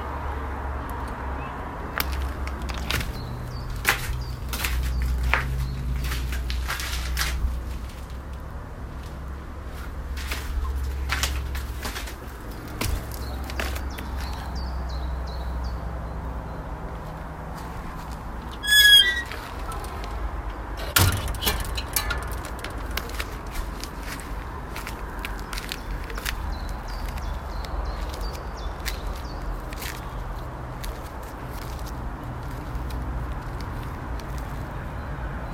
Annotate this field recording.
walk through the garden and listen to the surrounding sound on a Saturday afternoon